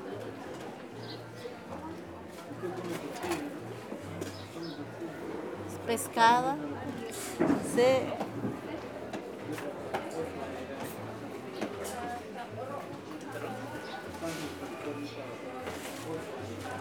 San Pedro La Laguna, Guatemala - Conversation in Tzutujil